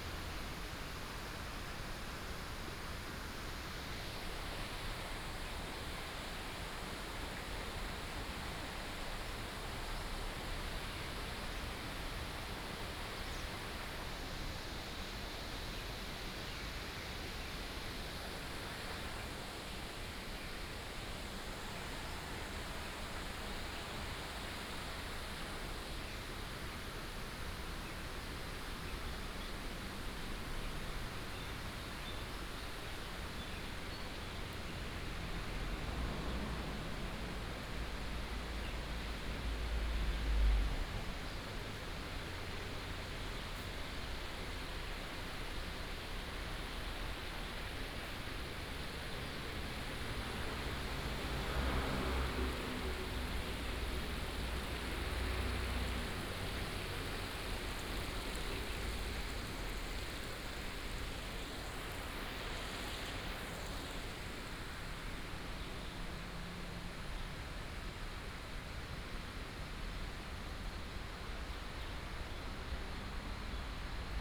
{
  "title": "東安古橋, Guanxi Township - Under the old bridge",
  "date": "2017-07-25 07:08:00",
  "description": "Under the old bridge, Traffic sound, Stream sound, sound of the birds",
  "latitude": "24.79",
  "longitude": "121.18",
  "altitude": "140",
  "timezone": "Asia/Taipei"
}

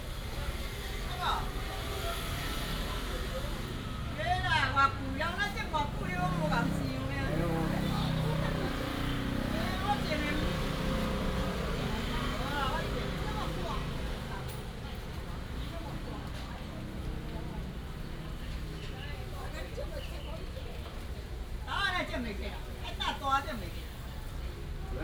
{
  "title": "Gongyuan Rd., Luodong Township - Vegetable vendors",
  "date": "2017-12-09 10:40:00",
  "description": "An old woman is selling vegetables, Vegetable vendors, Rainy day, Traffic sound, Binaural recordings, Sony PCM D100+ Soundman OKM II",
  "latitude": "24.68",
  "longitude": "121.77",
  "altitude": "14",
  "timezone": "Asia/Taipei"
}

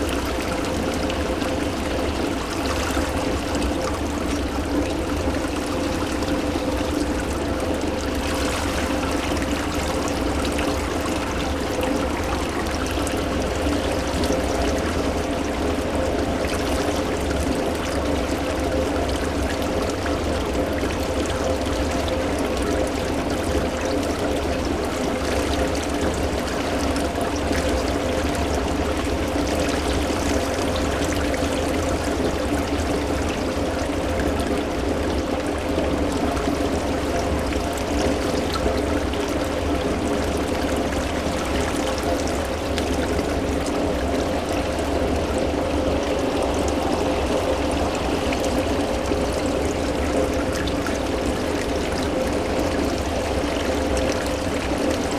{
  "title": "Pyramide du Louvre Paris Buz",
  "date": "2010-05-18 15:56:00",
  "description": "Pyramide du Louvre\nMoteur et tuyau sous le bassin",
  "latitude": "48.86",
  "longitude": "2.34",
  "altitude": "44",
  "timezone": "Europe/Paris"
}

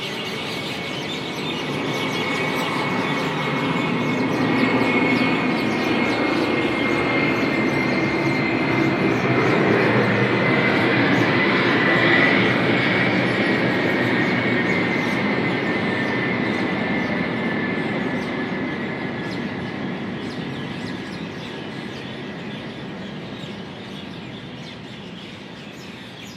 {
  "title": "B, West End Colony, Block D, Moti Bagh, New Delhi, Delhi, India - 04 Common Myna everyday board meeting",
  "date": "2016-01-12 19:49:00",
  "description": "Evening hordes of birds, finding its place on trees.\nZoom H2n + Soundman OKM",
  "latitude": "28.57",
  "longitude": "77.16",
  "altitude": "239",
  "timezone": "Asia/Kolkata"
}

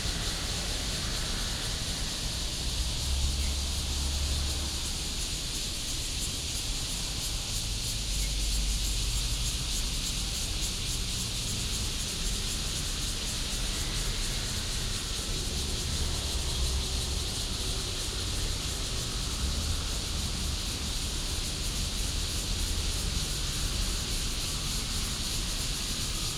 {
  "title": "五權公園, 中壢區正大街 - Cicada cry",
  "date": "2017-07-26 07:13:00",
  "description": "in the park, Cicada cry, traffic sound",
  "latitude": "24.96",
  "longitude": "121.20",
  "altitude": "126",
  "timezone": "Asia/Taipei"
}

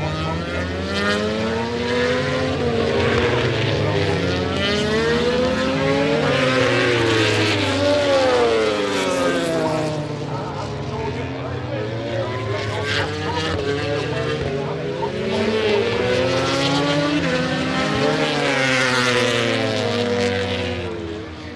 {
  "title": "Derby, UK - british motorcycle grand prix 2007 ... motogp warmup ...",
  "date": "2007-06-24 09:30:00",
  "description": "british motorcycle grand prix 2007 ... motogp warm up ... one point stereo mic to minidisk ...",
  "latitude": "52.83",
  "longitude": "-1.38",
  "altitude": "96",
  "timezone": "Europe/London"
}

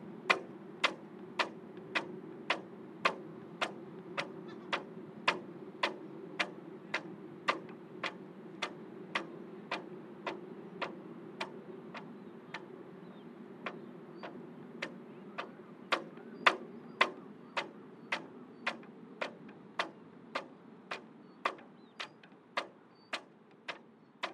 {
  "title": "Katwijk-Aan-Zee, Nederlands - Flag in the wind",
  "date": "2019-03-29 16:20:00",
  "description": "Katwijk-Aan-Zee, a flag into the wind near the Katwijkse Reddingsbrigade Post Noord.",
  "latitude": "52.21",
  "longitude": "4.40",
  "timezone": "Europe/Amsterdam"
}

